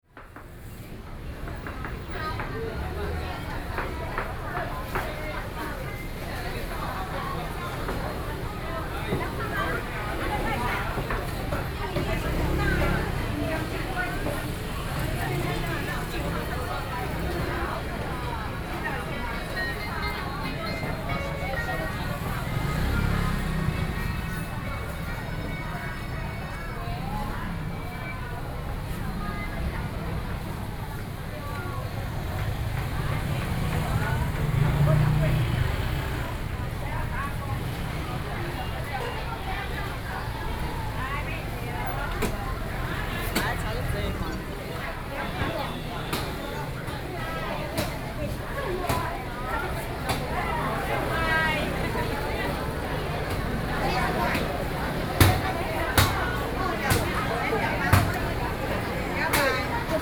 {"title": "Heping St., Sanxia Dist., New Taipei City - Walking through the traditional market", "date": "2012-07-08 09:49:00", "description": "Walking through the traditional market, Traffic Sound\nBinaural recordings, Sony PCM D50", "latitude": "24.94", "longitude": "121.37", "altitude": "47", "timezone": "Asia/Taipei"}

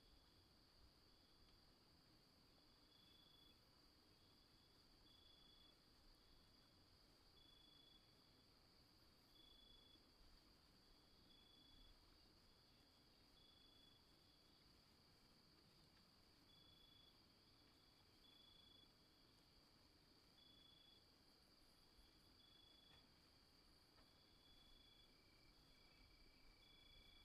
{"title": "Santuario, Antioquia, Colombia - Natural soundscape Santuario", "date": "2013-09-09 22:13:00", "description": "Field recording captured on the rural areas of Santuario, Antioquia, Colombia.\n10:00 pm night, clear sky\nZoom H2n inner microphones in XY mode.\nRecorder at ground level.", "latitude": "6.12", "longitude": "-75.26", "timezone": "America/Bogota"}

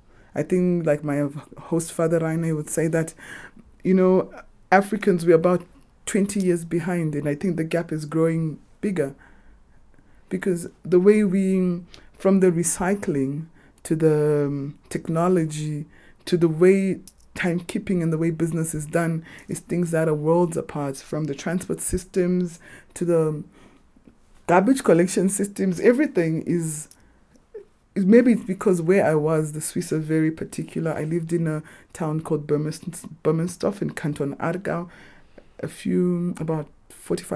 {"title": "Avondale, Harare, Zimbabwe - Europe is worlds apart...", "date": "2012-08-28 15:39:00", "description": "Ruvimbo stayed for a year in Switzerland on a student exchange. Some of her observations and experiences picture here...", "latitude": "-17.80", "longitude": "31.03", "altitude": "1503", "timezone": "Africa/Harare"}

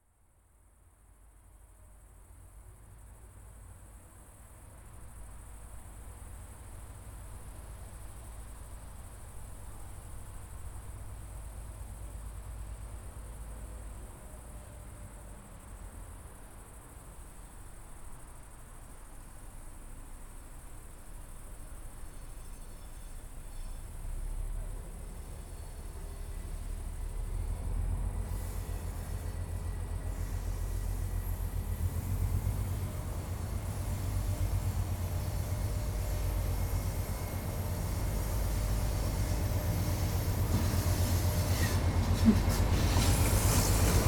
{"title": "Pribinova, Bratislava, Slovakia - Cargo Carriages Shunting in Bratislava Port", "date": "2021-06-25 20:39:00", "latitude": "48.14", "longitude": "17.13", "altitude": "137", "timezone": "Europe/Bratislava"}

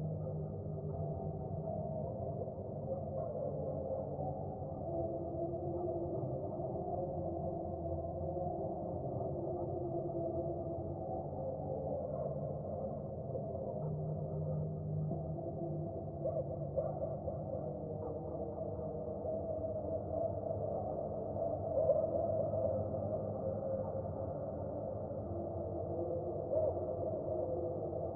{"title": "Φιλώτας, Ελλάδα - Echoes from midnight church mass", "date": "2021-10-09 01:43:00", "description": "Record by: Alexandros Hadjitimotheou", "latitude": "40.64", "longitude": "21.77", "altitude": "603", "timezone": "Europe/Athens"}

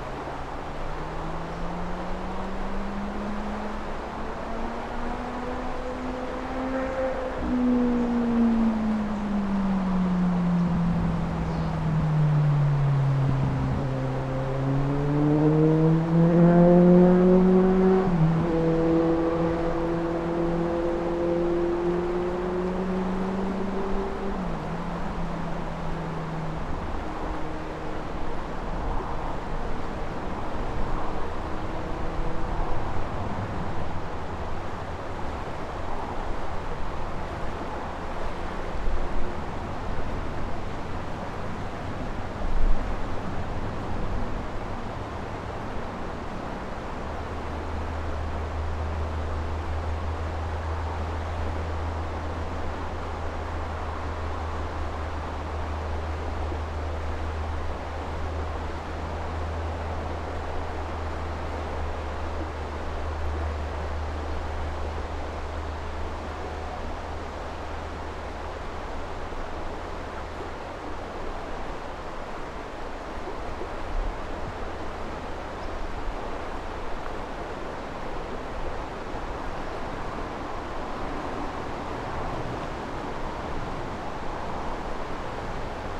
{"title": "Pont de la Côte de Clermont, Côte de Clermont, Clermont-le-Fort, France - Côte de Clermon", "date": "2022-09-25 15:00:00", "description": "river, bird, walker, water\nCaptation : ZOOMH6", "latitude": "43.46", "longitude": "1.42", "altitude": "159", "timezone": "Europe/Paris"}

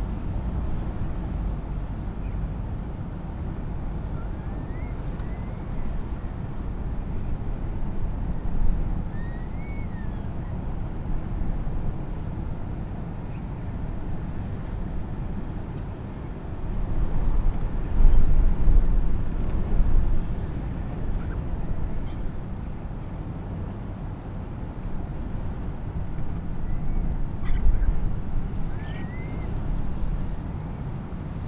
{"title": "Crewe St, Seahouses, UK - Starling flocking soundscape ...", "date": "2018-11-06 07:05:00", "description": "Starling flocking soundscape ... lavalier mics clipped to sandwich box ... starlings start arriving in numbers 13:30 + ... lots of mimicry ... clicks ... creaks ... squeaks ... bird calls from herring gull ... redshank ... oystercatcher ... lesser black-backed gull ... lots of background noise ... some wind blast ...", "latitude": "55.58", "longitude": "-1.65", "timezone": "Europe/London"}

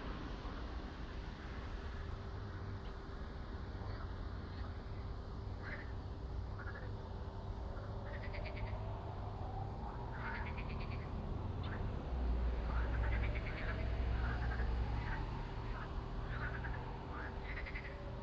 {"title": "Kanaleneiland, Utrecht, The Netherlands - Frogs, sirens & traffic", "date": "2014-05-30 17:43:00", "latitude": "52.05", "longitude": "5.11", "altitude": "1", "timezone": "Europe/Amsterdam"}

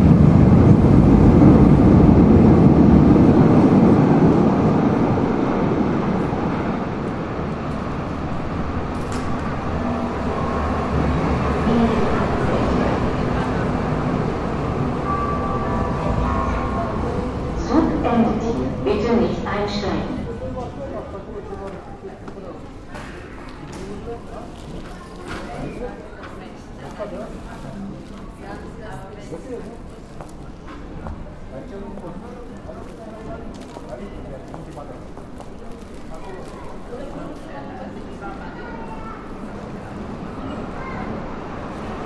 {"title": "Mitte, Hannover, Deutschland - U-Bahn-Station", "date": "2015-07-13 16:00:00", "latitude": "52.38", "longitude": "9.74", "altitude": "58", "timezone": "Europe/Berlin"}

